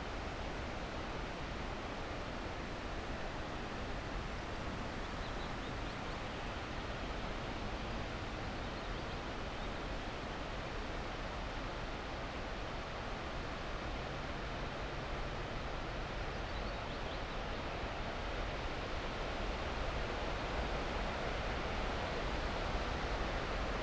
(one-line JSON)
{"title": "ex Soviet military base, Vogelsang - near former waterworks, boilerhouse, wind in trees", "date": "2017-06-16 15:00:00", "description": "fresh wind in the trees, near former waterworks, boilerhouse\n(SD702, MKH8020)", "latitude": "53.06", "longitude": "13.36", "altitude": "53", "timezone": "Europe/Berlin"}